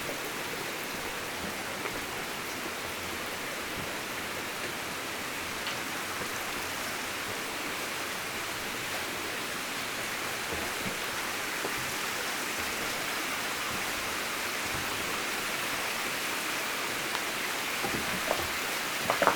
Rimogne, France - Walking into the inclined shaft
This is the very big inclined tunnel leading to the center of the underground quarry. I'm quietly walking, climbing the shaft. A lot of water flows everywhere.
11 February 2018